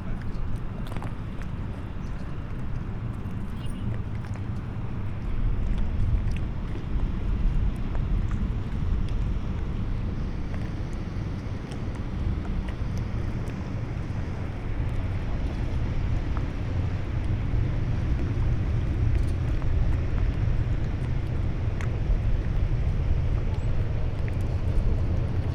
Grünau, Berlin, Deutschland - autumn Sunday at the pier
freighter passing by, gentle waves lapping, people waiting for the ferry
(Sony PCM D50, Primo EM172)